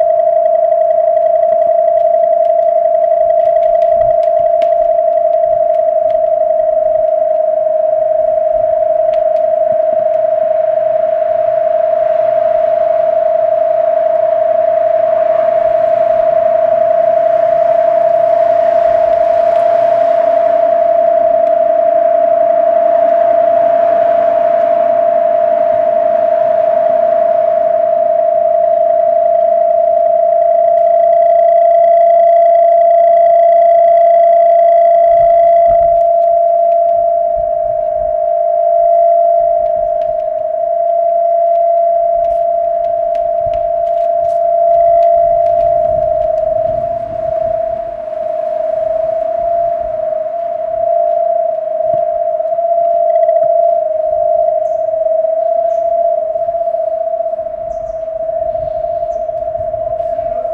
Havenkant, Leuven, Belgien - Leuven - Maaklerplek - sound installation
Near the street side of the Havenkant - the sound of a sound installation by Amber Meulenijzer entitled "Saab Sculpzure VI" - part of the sound art festival Hear/ Here in Leuven (B).The sound of an old Saab car with several speakers on top of the roof.
international sound scapes & art sounds collecion
Vlaanderen, België / Belgique / Belgien, 23 April 2022